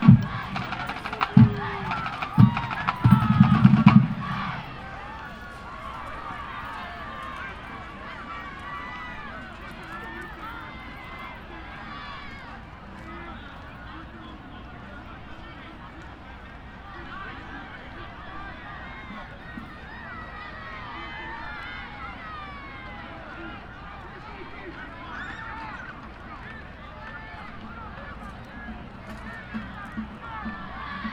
neoscenes: high school football game
AZ, USA